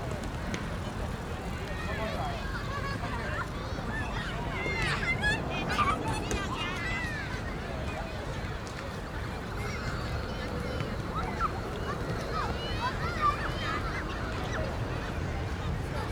{"title": "Erchong Floodway, New Taipei City - Holiday in the Park", "date": "2012-02-12 17:14:00", "description": "Dog, kids, basketball, Aircraft flying through, Rode NT4+Zoom H4n", "latitude": "25.07", "longitude": "121.47", "altitude": "1", "timezone": "Asia/Taipei"}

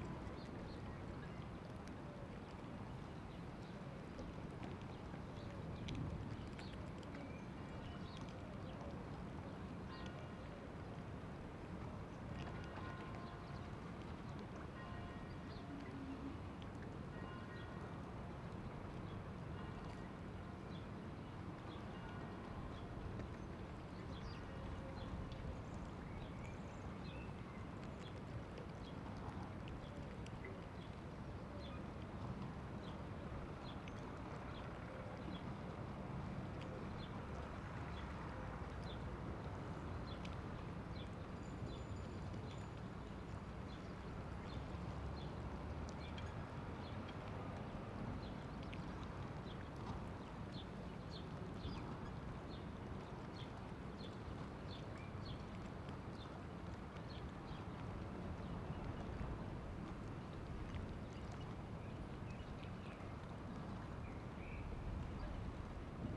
{"title": "Avenue Michel Crépeau, La Rochelle, France - Bassin des Chalutiers La Rochelle 8 am", "date": "2020-04-28 07:49:00", "description": "P@ysage Sonore La Rochelle . awakening of ducks at 4'23 . Bell 8 am at 9'27 .\n4 x DPA 4022 dans 2 x CINELA COSI & rycote ORTF . Mix 2000 AETA . edirol R4pro", "latitude": "46.15", "longitude": "-1.15", "altitude": "5", "timezone": "Europe/Paris"}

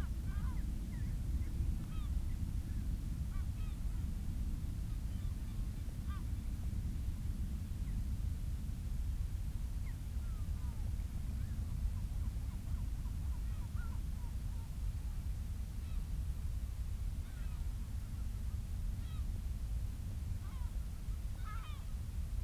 {"title": "Marloes and St. Brides, UK - european storm petrel ...", "date": "2016-05-16 21:30:00", "description": "Skokholm Island Bird Observatory ... storm petrels ... quiet calls and purrings ... lots of space between the calls ... open lavaliers clipped to sandwich box on top of a bag ... clear calm evening ...", "latitude": "51.70", "longitude": "-5.27", "altitude": "34", "timezone": "Europe/London"}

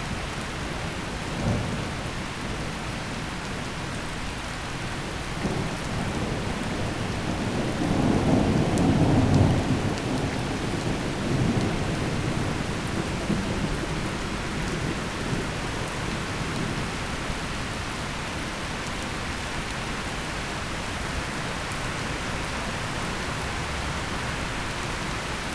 {"title": "park window - rain, rooms, intercom", "date": "2014-06-12 15:26:00", "latitude": "46.56", "longitude": "15.65", "altitude": "285", "timezone": "Europe/Ljubljana"}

